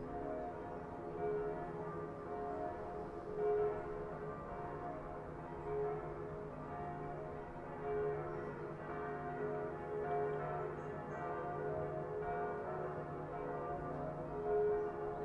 TASCAM DR-100mkII with integrated Mics